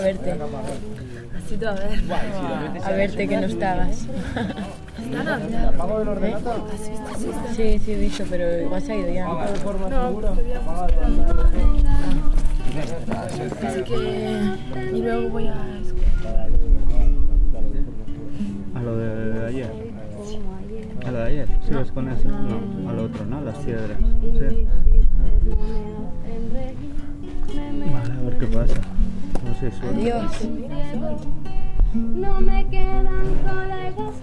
leioa bellas artes campa
more people coming to the music campa!!